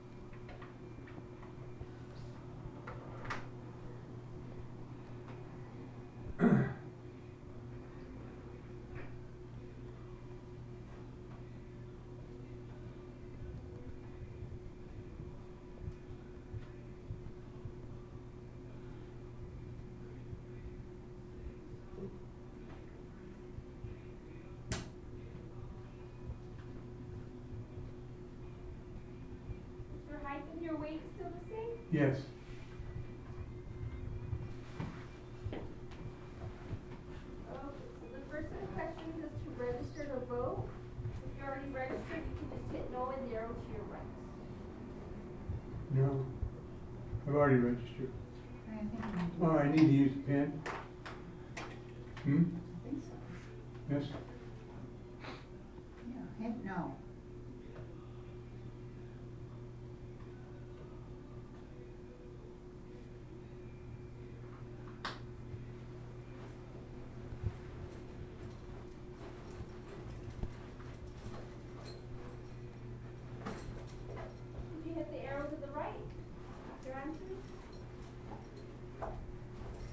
lots of office biz talk eaves drop